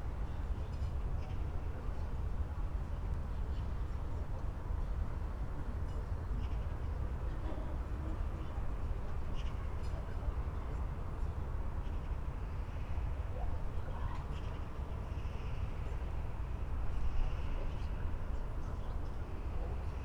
Bruno-Apitz-Straße, Berlin Buch, Deutschland - residential area, Sunday evening ambience
Berlin Buch, residential area (Plattenbau), inner yard, domestic sounds, some magpies, dogs, kids playing, Sunday early evening ambience in late Summer
(Sony PCM D50, Primo EM272)